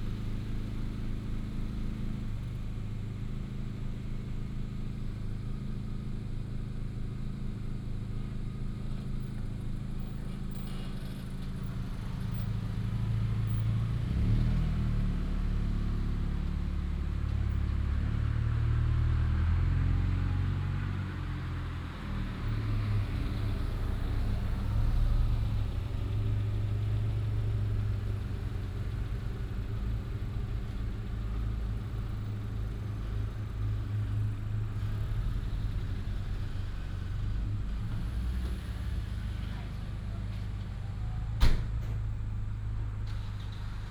南湖, Dahu Township, Miaoli County - Night highway
Night highway, Outside the convenience store, traffic sound, Insect beeps, Binaural recordings, Sony PCM D100+ Soundman OKM II